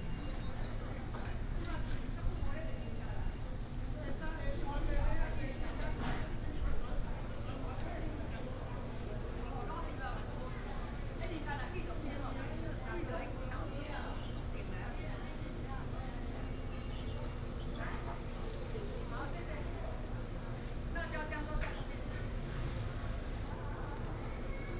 Huaide St., Nantun Dist., Taichung City - Old community
Bird call, Outside the market building, Traffic sound, Old community, Binaural recordings, Sony PCM D100+ Soundman OKM II